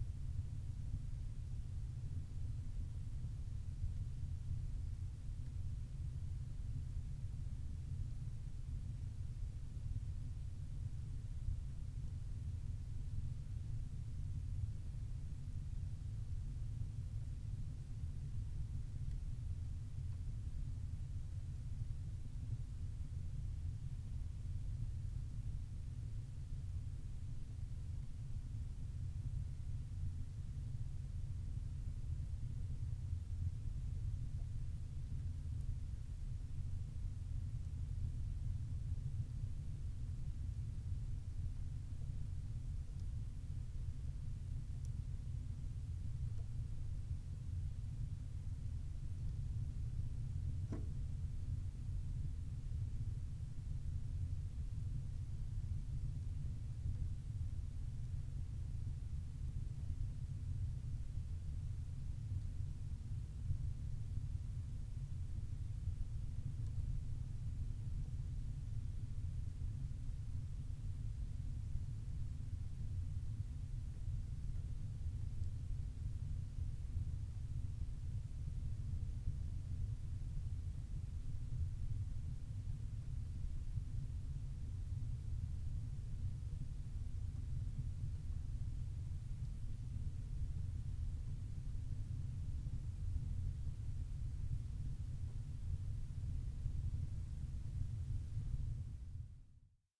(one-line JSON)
{"title": "Nesbister böd, Whiteness, Shetland, UK - The low drone of the stove inside the böd", "date": "2013-08-05 21:24:00", "description": "The böd at Nesbister is in a truly beautiful situation, a fifteen minute walk from where you can dump a car, perched at the edge of the water, at the end of a small, rocky peninsula. There is a chemical toilet and a cold tap there, and it's an old fishing hut. No electricity. People who have stayed there in the past have adorned the ledge of the small window with great beach finds; bones, shells, pretty stones, pieces of glass worn smooth by the sea, and driftwood. There is a small stove which you can burn peat in, and I set the fire up in this before heading down the bay to collect more driftwood kindling for the next person to stay after me. I set up EDIROL R-09 to document the wonderful low drone of a small peat-burning stove in an off-grid cottage with thick stone walls, thinking that this kind of domestic soundscape would have been the background for many nights of knitting in Shetland in the past.", "latitude": "60.19", "longitude": "-1.29", "altitude": "76", "timezone": "Europe/London"}